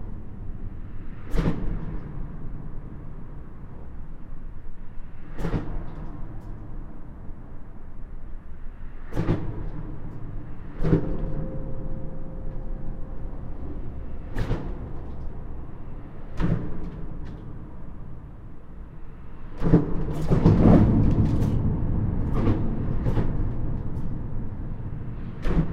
Hayange, France - Inside the bridge
Inside the concrete viaduct overlooking the Hayange town. Traffic-related shocks are very violent. This is the expansion joint of the bridge.